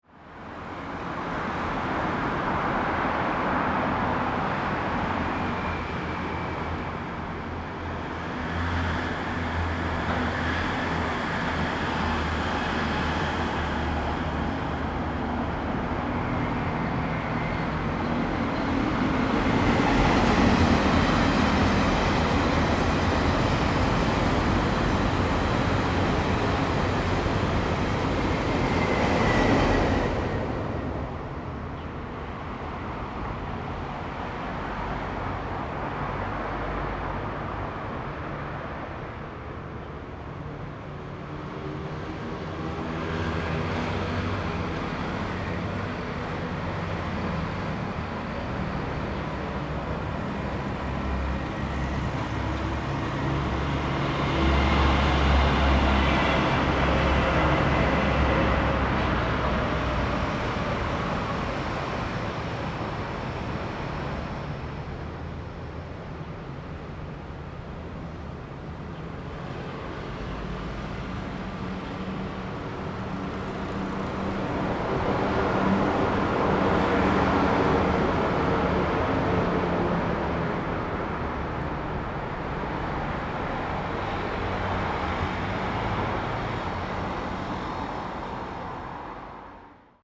Wenhua Rd., West Dist., Chiayi City - Traffic sound
Next to the railway track, Traffic sound, bird sound, The train runs through
Zoom H2n MS+XY + Spatial audio